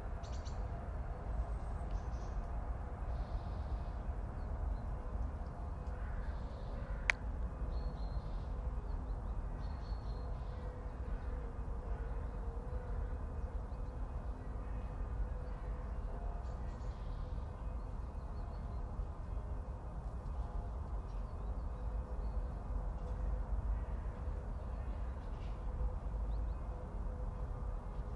Rain, trains, clangy bells, autumn robin, ravens, stream from the Schöneberger Südgelände nature reserve, Berlin, Germany - Distant Sunday bells, a train briefly sings, a plane and human voices
Distant Sunday bells add to the background. But it is now a little busier on this beautiful morning. Trains still pass, a plane roars above and the first voices of human voices of the day are heard.
Deutschland